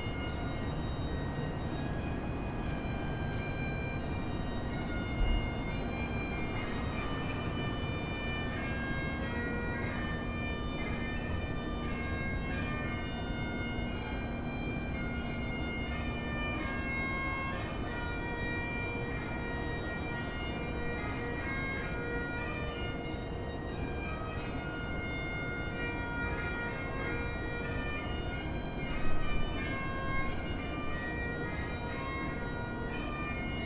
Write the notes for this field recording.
A family couldn't all be together as usual at a grandfather's birthday party, because of worries about spreading Covid-19, so his children hired a bagpiper to play outside. The rest of the family was outside on the street with the piper. (Recorded with Zoom H5.)